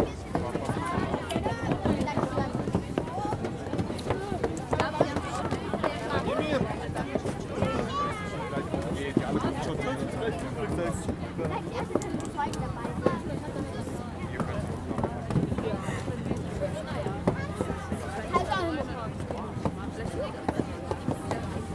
Kids and parents waiting for the public ice skating place will be opened.